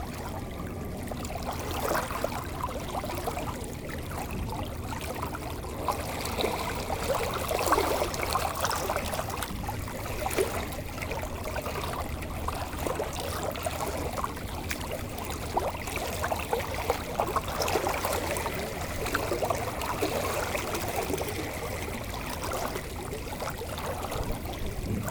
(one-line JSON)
{"title": "Antwerpen, Belgique - Schelde river", "date": "2018-08-04 14:55:00", "description": "Near the Schelde river on the 't Steen pontoon, listening to the water flowing, an helicopter passing and an empty Container ship going to the harbour.", "latitude": "51.22", "longitude": "4.40", "altitude": "2", "timezone": "GMT+1"}